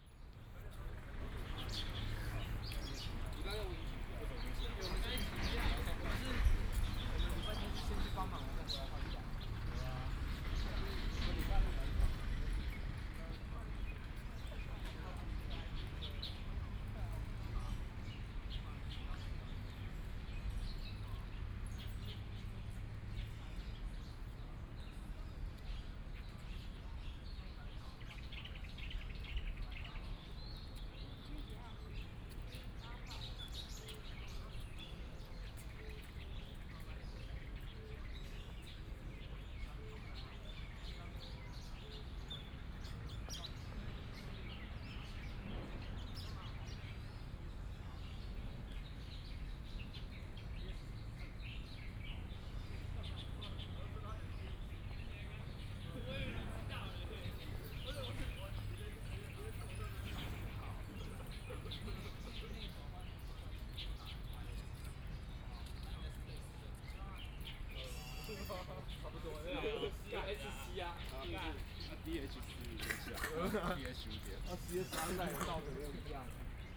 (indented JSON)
{
  "title": "Yuan Ze University, Neili - Standing under a tree",
  "date": "2013-12-09 16:06:00",
  "description": "Birds singing, Voice conversations between students, Traffic Sound, Binaural recording, Zoom H6+ Soundman OKM II",
  "latitude": "24.97",
  "longitude": "121.27",
  "altitude": "115",
  "timezone": "Asia/Taipei"
}